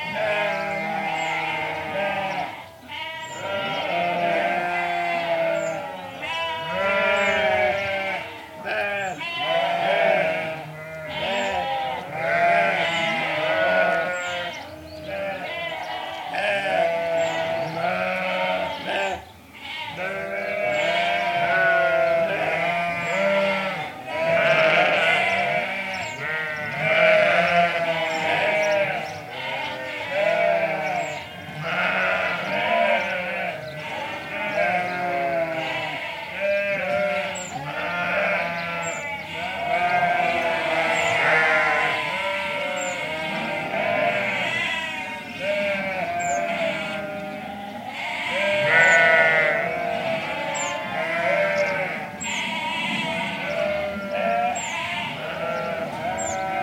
The post-shearing racket, Greystoke, Cumbria, UK - Sheep all baaing after being sheared
The sheep were all baaing like mad because they had just been shorn. The clipping was all happening in a barn where we couldn't see, but the freshly shorn sheep were all in a tizzy in the main yard, bleating and looking for their friends in the chaos. Shearing the sheep involves gathering them all up then shearing them one by one, then they have to go and find their buddies afterwards, which is made harder because everyone looks different after their haircut. So they are all going crazy in this recording and the noise of the sheep is setting the sparrows off. A noisy day on the farm. It was also a bit windy so I propped the EDIROL R-09 between some rocks in a dry stone wall. The recording has a bit of a strange acoustic because of this, but without the shelter, it would have been pretty difficult to record the amazing sounds.